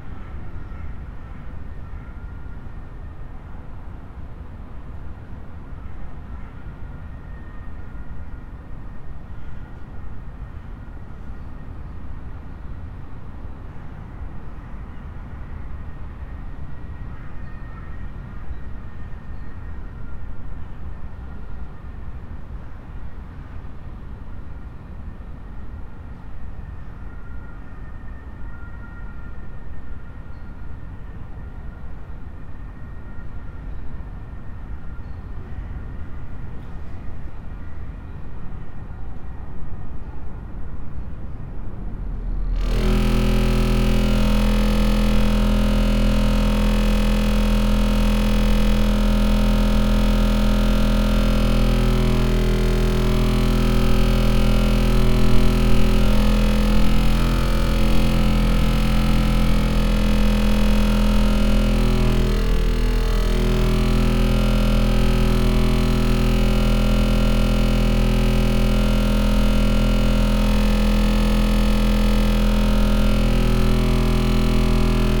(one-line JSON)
{"title": "Seraing, Belgique - Wind playing", "date": "2017-03-18 16:40:00", "description": "In an abandoned coke plant, the wind is playing with a metal plate, which vibrates at every gust. This noise is only made by the wind.", "latitude": "50.61", "longitude": "5.53", "altitude": "65", "timezone": "Europe/Brussels"}